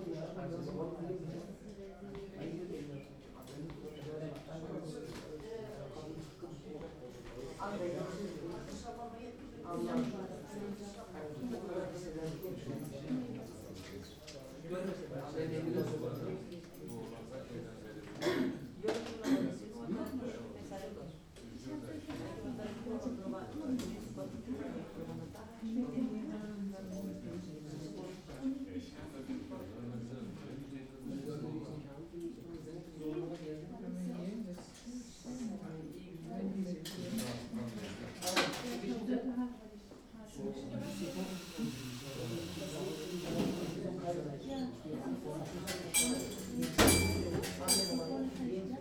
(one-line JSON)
{"title": "berlin, urban hospital - waiting room", "date": "2010-01-10 22:55:00", "description": "urban hospital, emergency unit, waiting room", "latitude": "52.49", "longitude": "13.41", "altitude": "38", "timezone": "Europe/Berlin"}